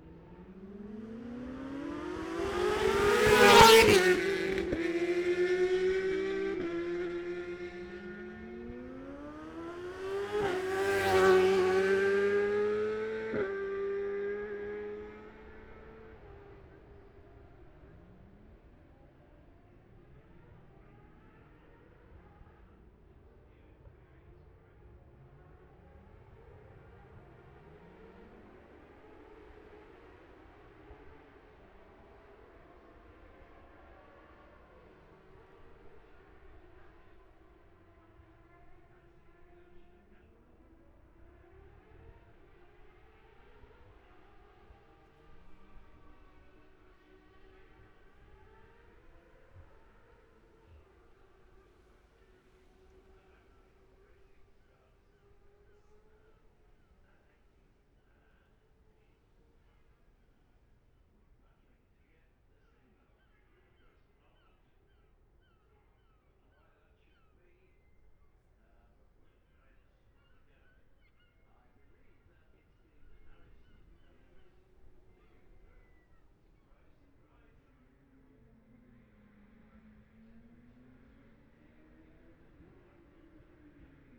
Jacksons Ln, Scarborough, UK - olivers mount road racing ... 2021 ...
bob smith spring cup ... 600cc group A practice ... luhd pm-01mics to zoom h5 ...